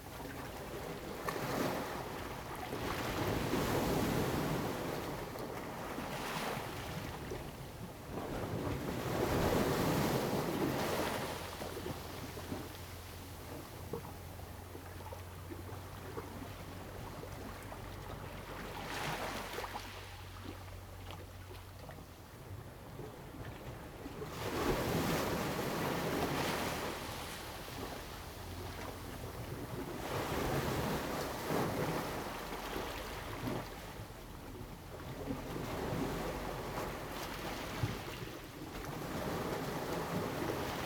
Jiayo, Ponso no Tao - Hiding in the rocks
Hiding in the rocks, In the beach, Sound of the waves
Zoom H2n MS +XY